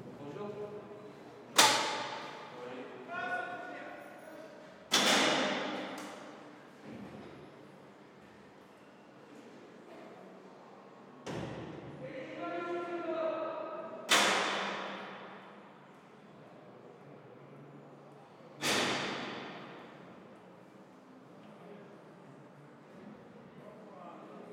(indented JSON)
{
  "title": "Rte des Bruyères, Longuenesse, France - Longuenesse - Pas-de-Calais - Centre de détention",
  "date": "2022-05-12 11:00:00",
  "description": "Longuenesse - Pas-de-Calais\nCentre de détention\nambiance",
  "latitude": "50.73",
  "longitude": "2.25",
  "altitude": "71",
  "timezone": "Europe/Paris"
}